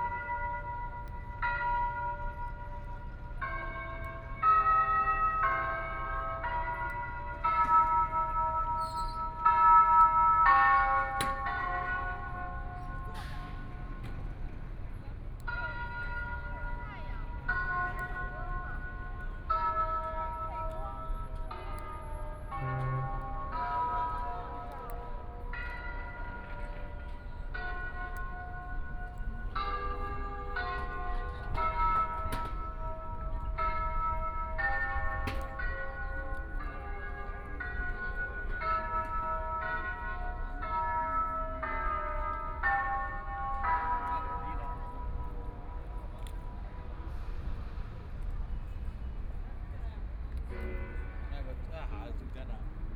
Wai Tan, Huangpu District - walk
Many tourists coming and going, The Bund (Wai Tan), Ship in the river, Binaural recording, Zoom H6+ Soundman OKM II
25 November 2013, 14:00